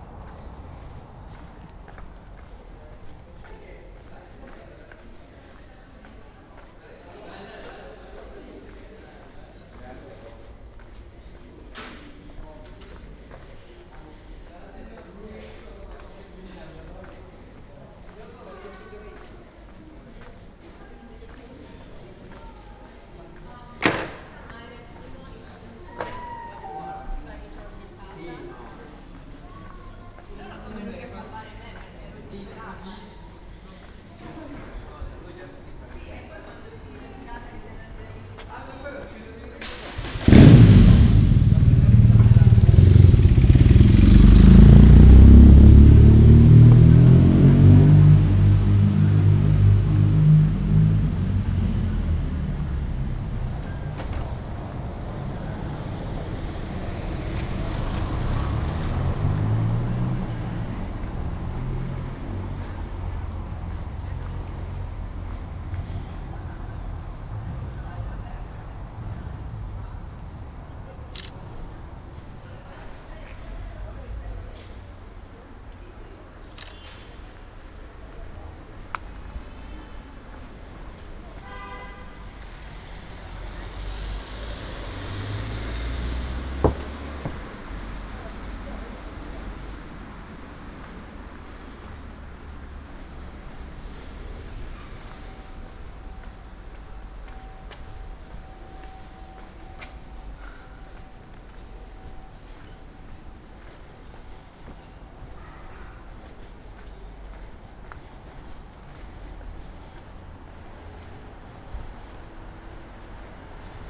Ascolto il tuo cuore, città. I listen to your heart, city. Several chapters **SCROLL DOWN FOR ALL RECORDINGS** - Ferragosto noon bells in the time of COVID19 Soundwalk
Ferragosto noon bells in the time of COVID19" Soundwalk
Chapter CLXXXI of Ascolto il tuo cuore, città. I listen to your heart, city
Sunday, August 15th, 2021, San Salvario district Turin, walking to Corso Vittorio Emanuele II and back, crossing Piazza Madama Cristina market. More than one year and five months after emergency disposition due to the epidemic of COVID19.
Start at 11:46 a.m. end at 00:33 p.m. duration of recording 37’27”
The entire path is associated with a synchronized GPS track recorded in the (kmz, kml, gpx) files downloadable here: